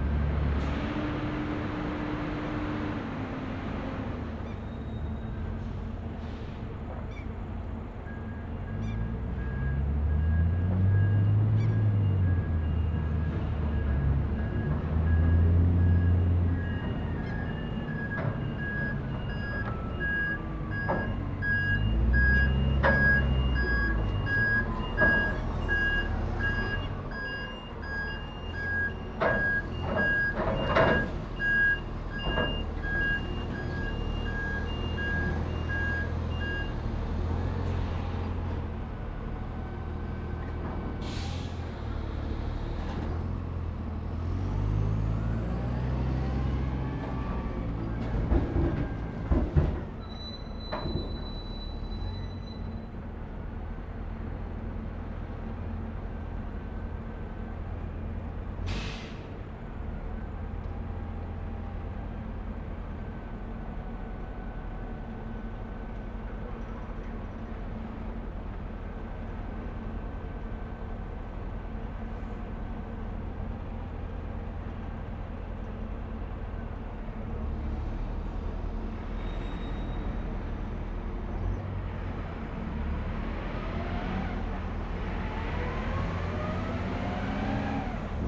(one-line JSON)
{"title": "Ume. Holmsund Ferry Terminal. Ferry docking", "date": "2011-05-05 12:45:00", "description": "Holmsund - Vaasa ferry docking and unloading.", "latitude": "63.68", "longitude": "20.34", "timezone": "Europe/Stockholm"}